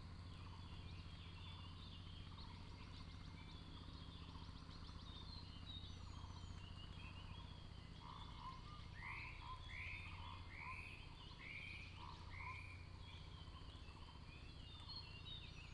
水上巷, 埔里鎮桃米里, Nantou County - early morning
Bird sounds, Crowing sounds, Morning road in the mountains
Puli Township, 水上巷, 19 April 2016, 05:30